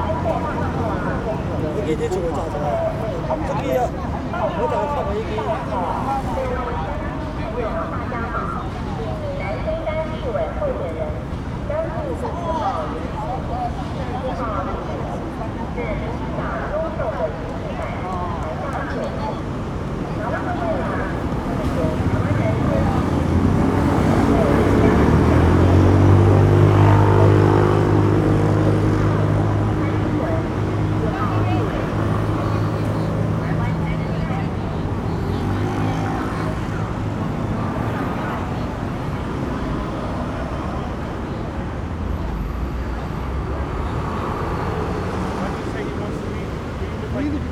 Sec., Jianguo S. Rd., Da’an Dist. - the corner of the road
Traffic Sound, In the corner of the road, Reporters interviewed, Election Parade
Zoom H4n + Rode NT4
Taipei City, Taiwan, January 2012